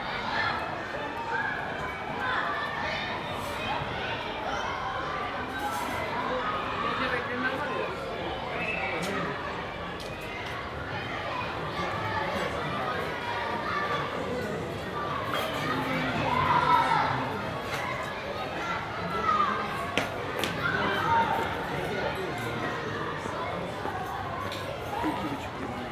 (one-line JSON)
{"title": "Children playing in schoolyard, Istanbul", "date": "2010-02-10 15:23:00", "description": "sounds of many children playing in a schoolyard", "latitude": "41.01", "longitude": "28.97", "altitude": "50", "timezone": "Europe/Tallinn"}